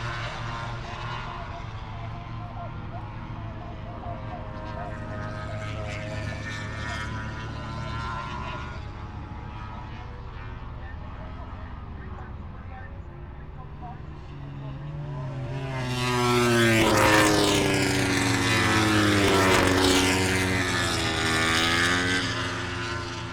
Silverstone Circuit, Towcester, UK - British Motorcycle Grand Prix 2017 ... moto grand prix ...
moto grand prix ... qualifying two ... open lavaliers clipped to chair seat ...